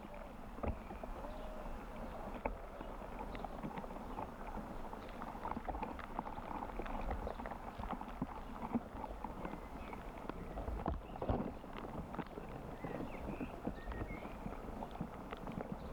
Prinzessinengärten, Moritzplatz, Berlin, Deutschland - slightly subterranean soundscape
Sounds of unclear origin mix with familiar sounds of the Berlin Rush hour, inkl. ambulance
(Sony PCM D50, DIY mics)
20 May 2022, 18:45